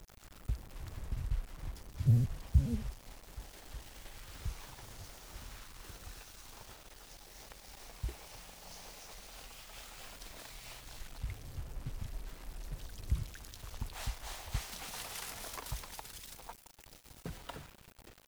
enregistré lor du tournage fleur de sel darnaud selignac
Poitou-Charentes, France métropolitaine, European Union